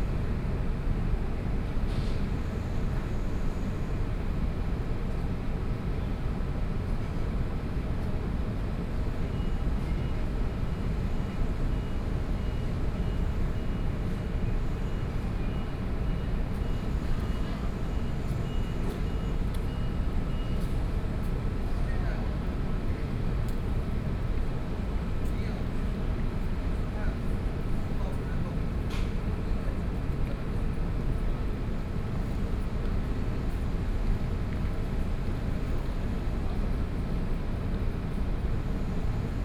中山區中山里, Taipei City - air conditioning noise
Building opposite, the noise generated by air conditioning, Traffic Sound, Environmental Noise